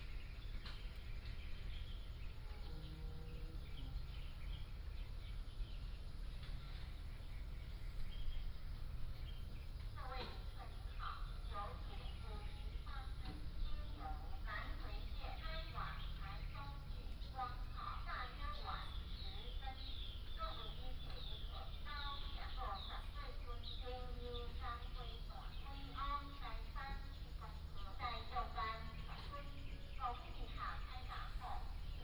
Kangle Station, Taitung City - Quiet little station
Quiet little station, Birdsong Traffic Sound
Taitung City, Taitung County, Taiwan, 9 September 2014, 09:21